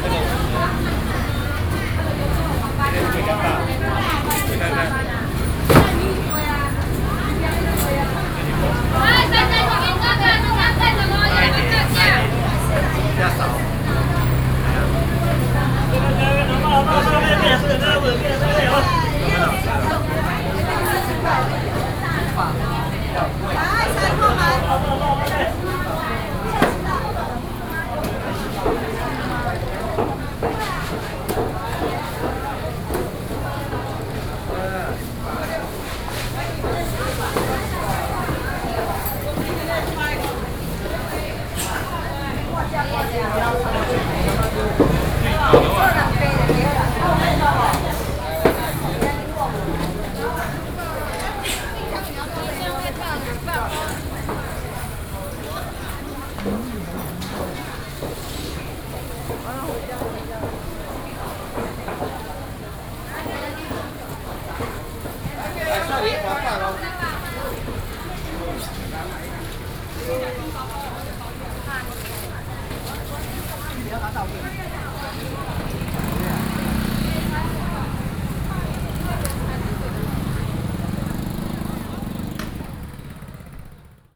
Zhongxing Rd., Banqiao Dist., New Taipei City - Walking un the traditional market
Walking in the traditional market
Sony PCM D50+ Soundman OKM II
17 June 2012, 07:55